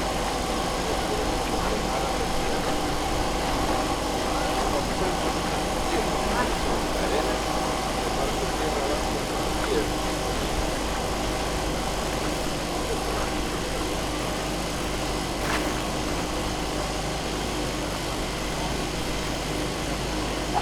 Athens, Acropolis - sand leveling
workers doing some work inside of Erechtheion - putting a patch of sand on the building floor and leveling it with a machine. (sony d50)